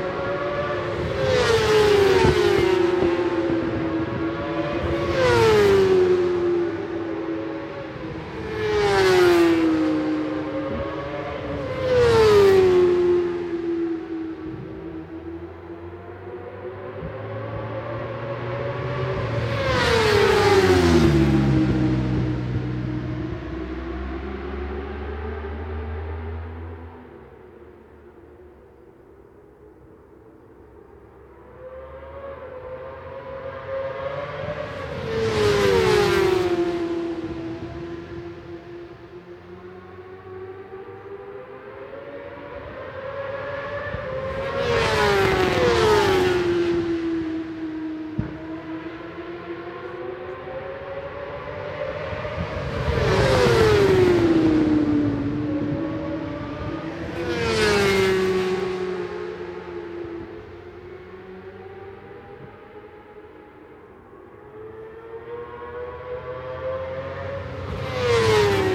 Brands Hatch GP Circuit, West Kingsdown, Longfield, UK - british superbikes 2004 ... supersports ...
british superbikes 2004 ... supersports 600s qualifying two ... one point stereo mic to minidisk ...